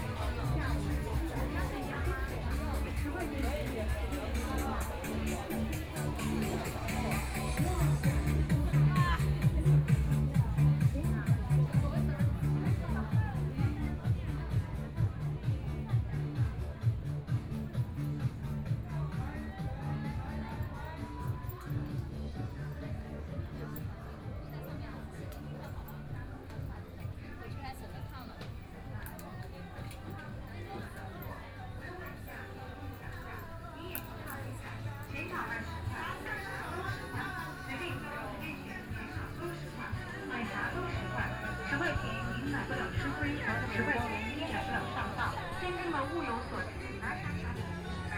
Walking in the A small underground mall, Binaural recording, Zoom H6+ Soundman OKM II
Zhabei, Shanghai, China